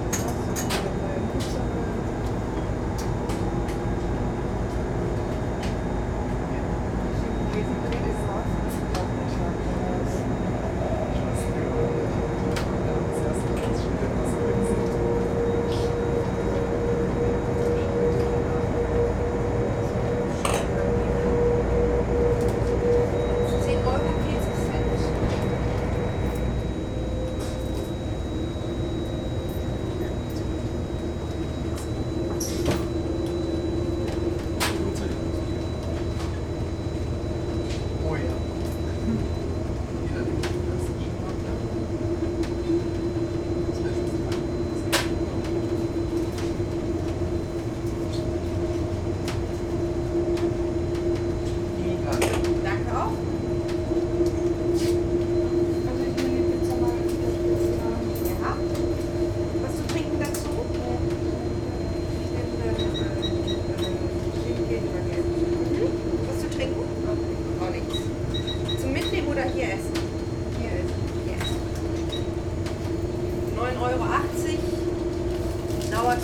ICE Ffm - Cologne - Bistro
working conditions: ICE3 board bistro, people ordering. background soundscape of engine and various changing high freqiencies.
(zoom h2, builtin mics, 120°)